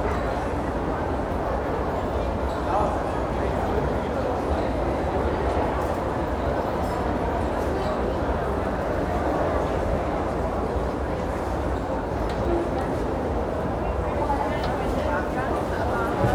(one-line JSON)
{"title": "Alexa shopping mall, Grunerstraße, Berlin, Germany - Alexa shopping mall, one bong, security 'no photos'", "date": "2013-05-10 14:12:00", "latitude": "52.52", "longitude": "13.42", "altitude": "38", "timezone": "Europe/Berlin"}